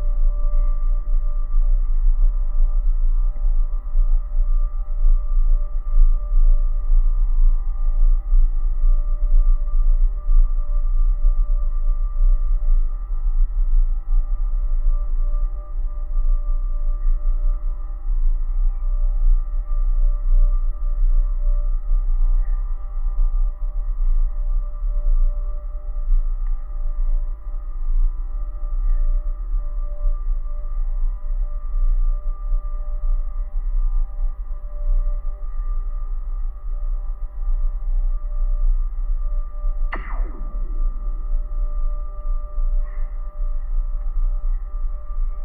Taujenai, Lithuania, cell tower
cell tower support wires. recorded with two contact mics and geophone. low frequencies.
Vilniaus apskritis, Lietuva, May 2020